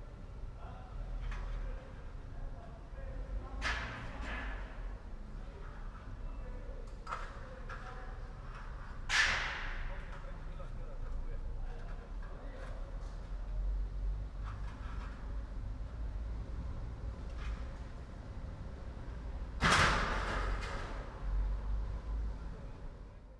sometimes you can hear silence to ...
Maribor, Slovenia